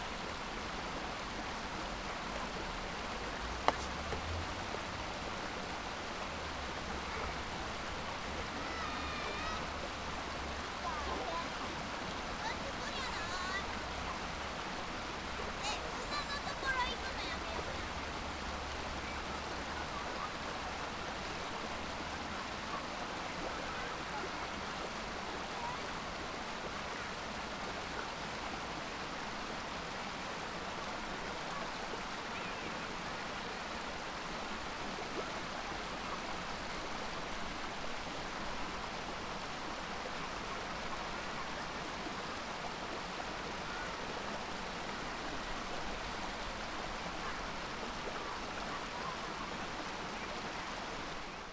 Osaka, Utsubohonmachi district, Utsobo park - water over rocks